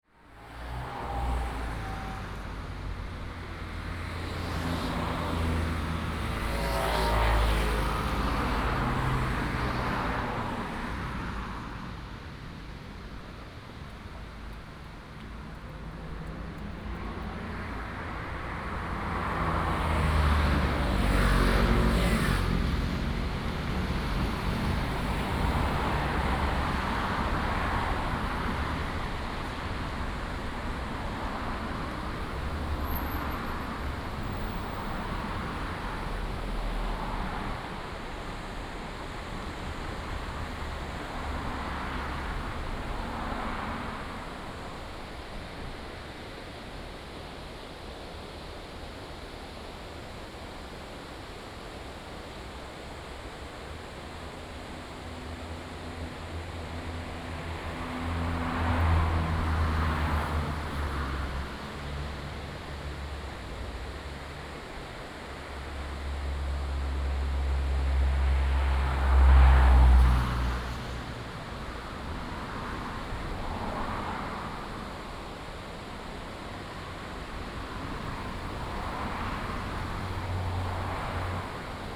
人止關, 仁愛鄉大同村 - Streams and traffic sounds
Streams and traffic sounds
Binaural recordings
13 December 2016, 11:24